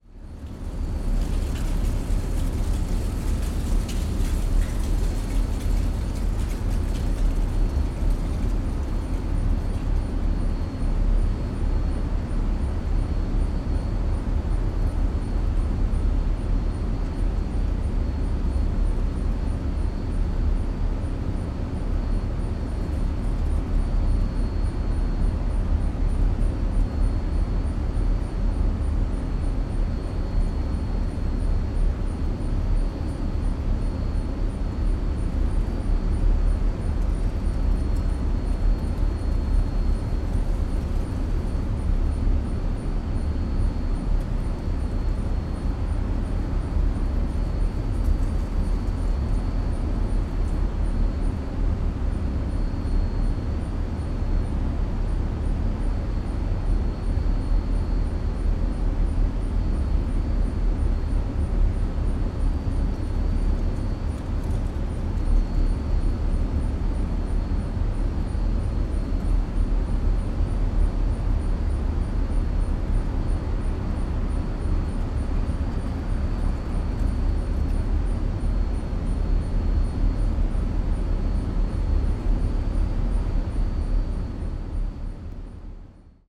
Tunnel University of Kansas, Lawrence, Kansas, USA - KU Tunnel
Recording within pedestrian tunnel at University of Kansas. Sounds of machinery just outside entrance and leaves being swept by wind.